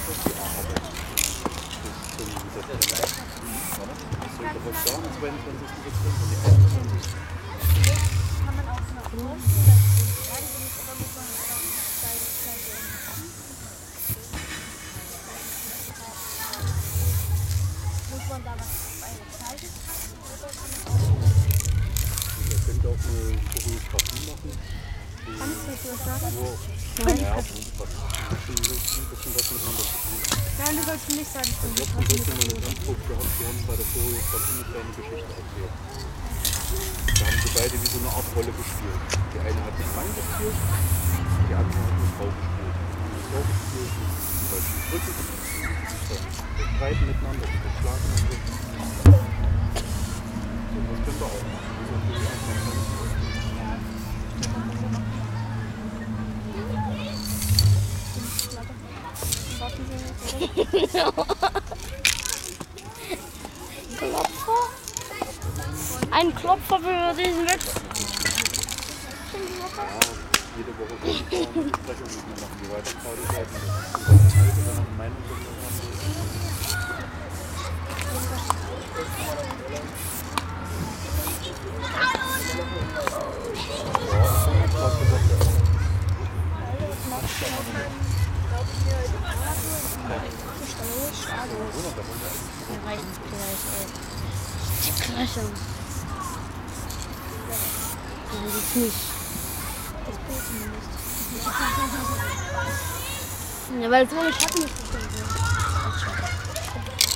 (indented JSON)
{
  "title": "gotha, kjz big palais, beim graffitiprojekt - beim graffitiprojekt",
  "date": "2012-08-08 16:06:00",
  "description": "das graffitiprojekt übt, im hintergrund verkehr, der bolzplatz und ein großer spielplatz. dosen, caps, schütteln, sprühen...",
  "latitude": "50.94",
  "longitude": "10.70",
  "altitude": "313",
  "timezone": "Europe/Berlin"
}